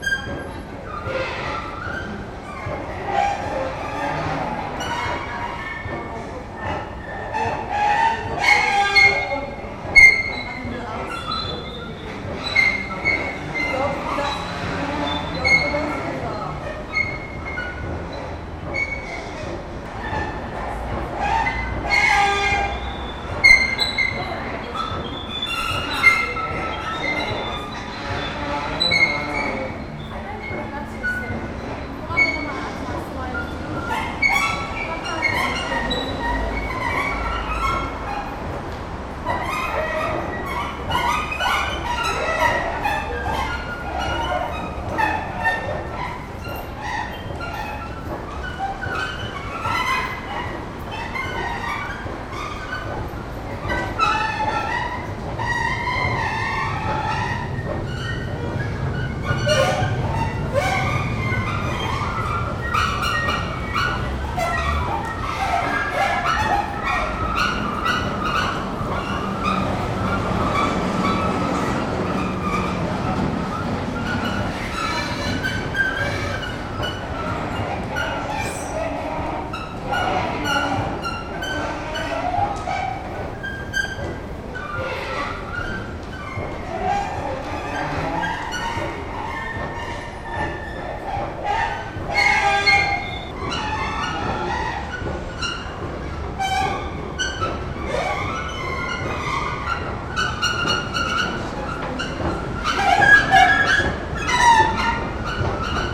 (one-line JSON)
{"title": "Lindower Str., Berlin, Deutschland - The Escalator Acts Up/Die Rolltreppe spielt auf", "date": "2018-09-03 13:02:00", "description": "S-Bahn station Berlin Wedding. At noon. Many people come out of the subway, drive up the escalator in a glass-roofed room to the S-Bahn, others go down the steps next to it to the subway. In between is the busy Müllerstraße. All the sounds come from this escalator. I walk around and take the stairs. Most people react unmoved to the sounds as if it were everyday life in Berlin. Three hours later, the escalator is noiselessly rhythmic again.\nS-Bahn Station Berlin Wedding. Mittags. Viele Leute kommen aus der U-Bahn, fahren die Rolltreppe in einem glasüberdachten Raum zur S-Bahn hoch, andere gehen die Stufen daneben zur U-Bahn runter. Dazwischen die vielbefahrene Müllerstraße. Die Geräusche kommen alle nur von dieser einen Rolltreppe. Ich umlaufe und befahre die Treppe. Die meisten Menschen reagieren unbewegt auf die Geräuschkulisse, als sei das Alltag in Berlin. Drei Stunden später ist die Treppe wieder geräuschlos rhythmisch.", "latitude": "52.54", "longitude": "13.37", "altitude": "38", "timezone": "GMT+1"}